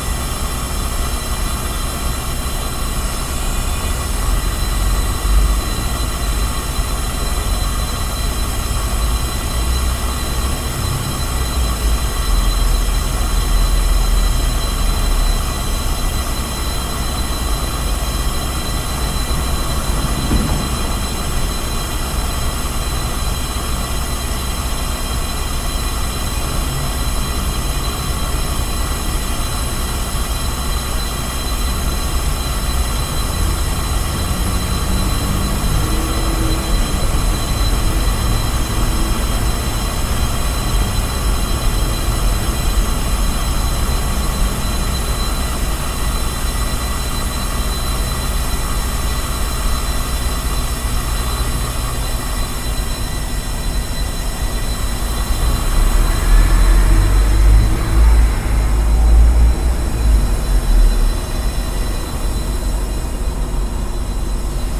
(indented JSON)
{
  "title": "Austin, Brazos Street, Gas pipe",
  "date": "2011-11-11 19:06:00",
  "description": "USA, Texas, Austin, Gas, Pipe, Binaural",
  "latitude": "30.27",
  "longitude": "-97.74",
  "altitude": "163",
  "timezone": "America/Chicago"
}